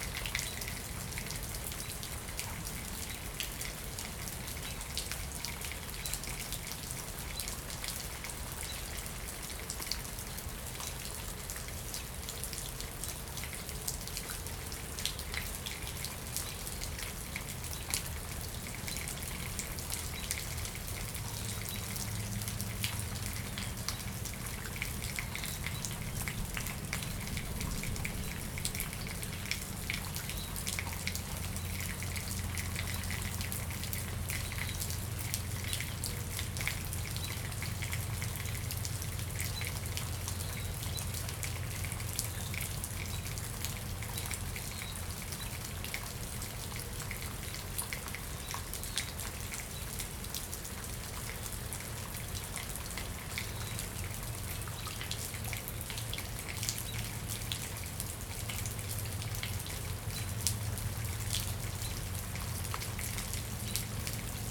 Paderewski Dr, Buffalo, NY, USA - Abandoned Walkway at Buffalo Central Terminal - Dripping Rain & Distant Trains
Buffalo Central Terminal was an active station in Buffalo, New York from 1929 to 1979. Now abandoned, much of the building infrastructure remains and there is active railroad use nearby. This recording is with a H2N as rain falls through the holes in the ceiling of the abandoned walkway and a few trains rumble slowly by in the near distance. City sounds (cars, sirens) can be heard as well (and also a swooping seagull).